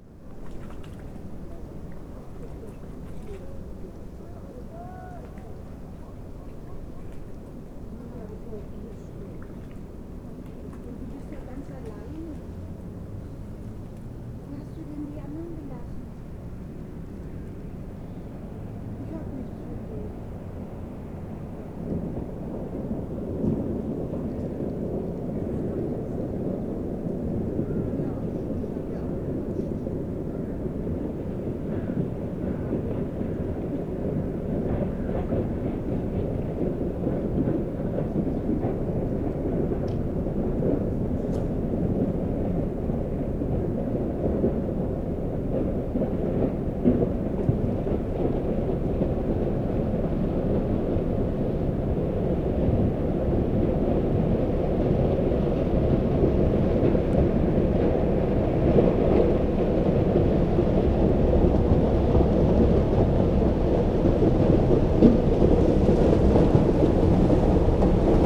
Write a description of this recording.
icebreaker opens a ship channel through the ice, the city, the country & me: february 12, 2012